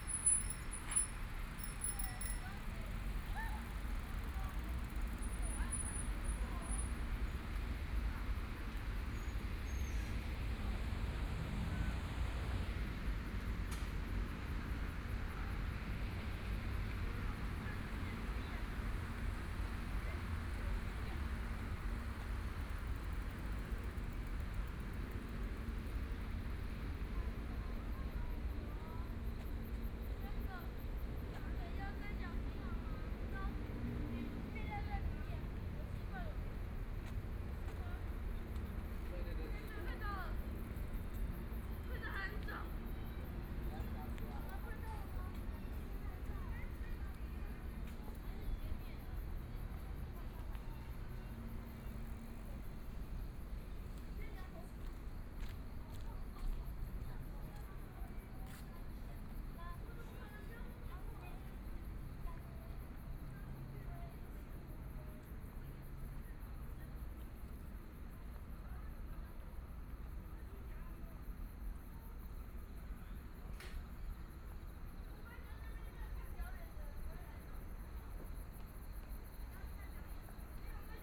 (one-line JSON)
{"title": "淡水區八勢里, New Taipei City - soundwalk", "date": "2014-04-05 18:56:00", "description": "Walking trail behind the MRT station, Footsteps, Traffic Sound\nPlease turn up the volume a little. Binaural recordings, Sony PCM D100+ Soundman OKM II", "latitude": "25.15", "longitude": "121.46", "altitude": "11", "timezone": "Asia/Taipei"}